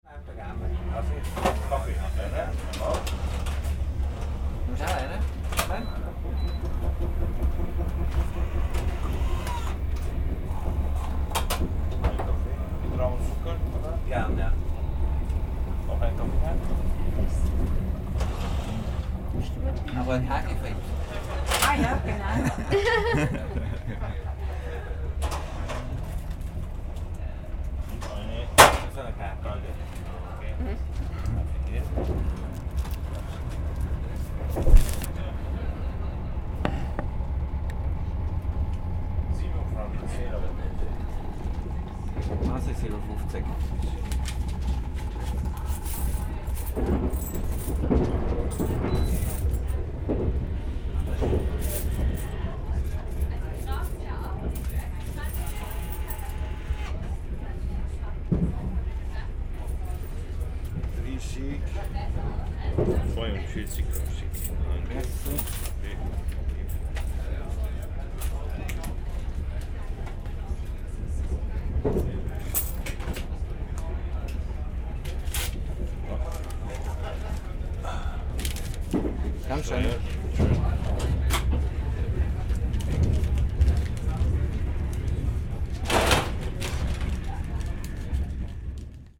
Zugwagen mit Getränken Richtung Basel
Zugwagen mit Getränken und Espresso und Schokolade